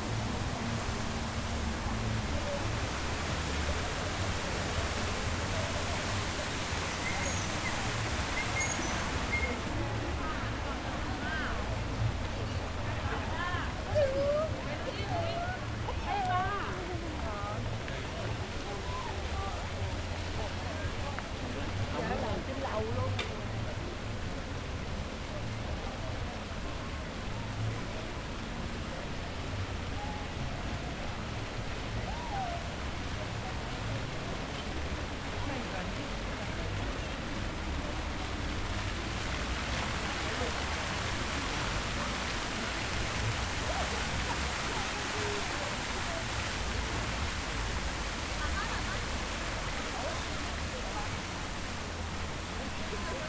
Lausanne Ouchy, Treffpunkt See und Brunnen
Treffpunkt Chill und Essen und Musik und typisch Welschland, französisch ist einfach sinnlich